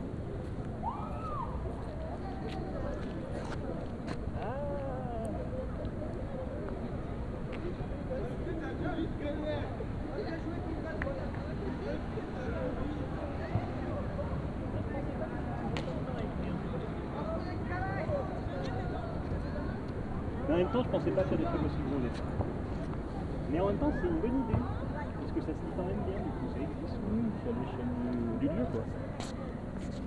Givors, Rue casanova - Casanova Song
Durant un mois environ, un jour ou deux par semaine, nous nous installons, un seul artiste, parfois eux, trois ou quatre, sur une place de Givors, face à un lycée. Place dent creuse, délaissé urbain en attente de requalification, entourée d'immeubles, avec des vestiges carrelés d'un ancien immeuble, qui nous sert de "salon" en plein air. Canapés et fauteuils, étrange pèche, lecture et écritures au sol, tissages de fil de laine, écoute, dialogues. Les lycéens, des adultes, même des policiers; viennent nous voir, tout d'abord intrigués de cette étrange occupations, parlent de leur quartier, font salon... Tout ce que l'on recherche dans cette occupation poétique de l'espace public. Nous écrivons, photographions, enregistrons... Matière urbaine à (re)composer, traces tranches de ville sensibles, lecture et écritures croisées de territoires en constante mutation... Et sans doute un brin de poésie, visiblement apprécié, dans ce monde violent, incertain et inquiet.
Givors, France, 24 November 2015, 12:45